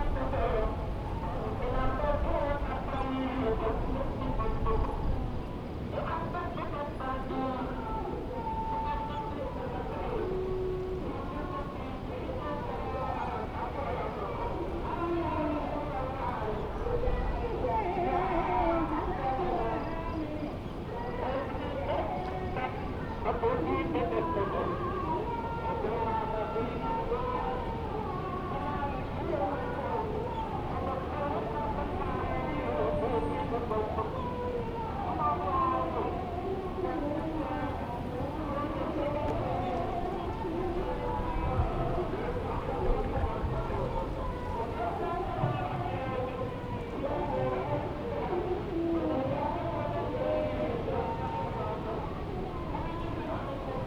Standing at the corner of the island facing the archipelago and the Fisherman's Village, this recording was taken at midnight. There was a huge crescent moon overhead. There were dozens of sleeping dogs lying all around me in the sand. There was a breeze coming off the ocean. In the recording you can hear all of the prayers from different mosques on the archipelago. Recorded with a Zoom H4.